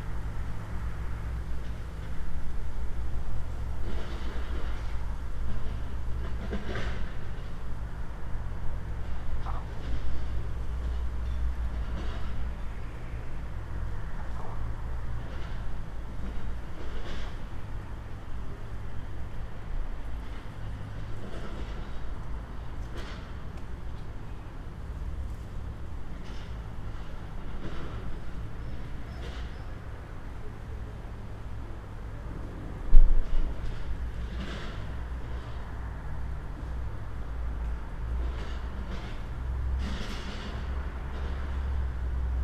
Utena, Lithuania, building renovation
Building renovation works
Utenos rajono savivaldybė, Utenos apskritis, Lietuva, 10 August 2021